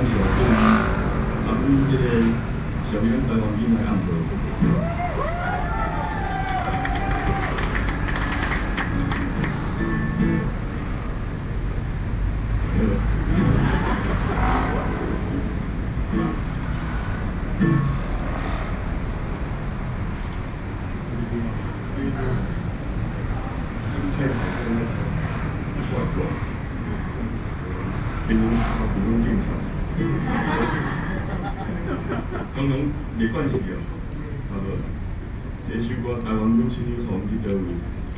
Taipei - anti–nuclear
anti–nuclear power, in front of the Plaza, Broadcast sound and traffic noise, Sony PCM D50 + Soundman OKM II
Taipei City, Taiwan